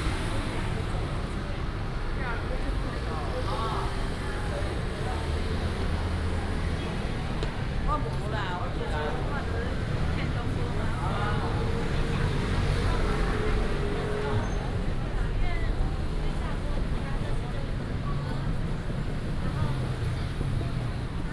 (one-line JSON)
{"title": "Chungli station, Taoyuan County - Walk into the station", "date": "2017-02-07 17:27:00", "description": "Walk into the station, Station hall, Station Message Broadcast", "latitude": "24.95", "longitude": "121.23", "altitude": "138", "timezone": "GMT+1"}